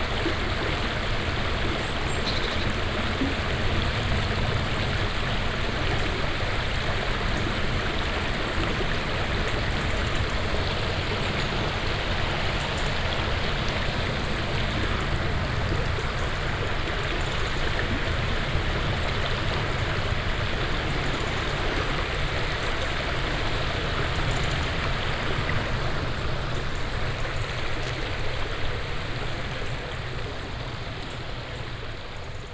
michelau, river sauer
At the river sauer, the sound of the floating water - Coming from the nearby station and reflecting on the water - the sound of a train driving into the station and a french announcement followed by the constant river sound and some birds chirping inside the forest.
Michelau, Fluss Sauer
Am Fluss Sauer, das Geräusch von fließendem Wasser. Vom nahen Bahnhof kommend und im Wasser spiegelnd. Das Geräusch von einem Zug, der in den Bahnhof einfährt und eine neue Durchsage, gefolgt von einem konstanten Flussgeräusch und einigen Vögel, die im Wald zwitschern.
Michelau, rivière Sauer
Sur la rivière Sauer, le bruit de l’eau qui coule – En provenance de la station proche et se répercutant sur l’eau – le bruit d’un train entrant en gare et une annonce en français suivie du bruit continu de la rivière et quelques oiseaux gazouillant dans la forêt.
Project - Klangraum Our - topographic field recordings, sound objects and social ambiences